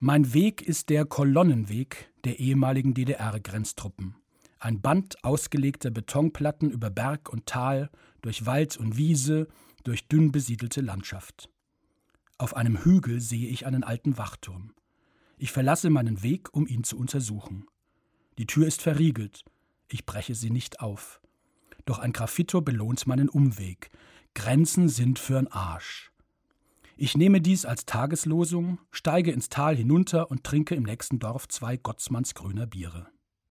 Produktion: Deutschlandradio Kultur/Norddeutscher Rundfunk 2009